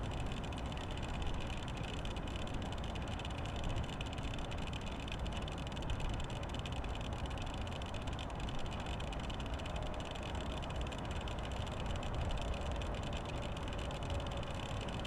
Tempelhof, Berlin, Deutschland - wind wheel
Berlin Tempelhof, windy autumn day, improvised wind wheel
(SD702, Audio Technica BP4025)
18 November, 14:00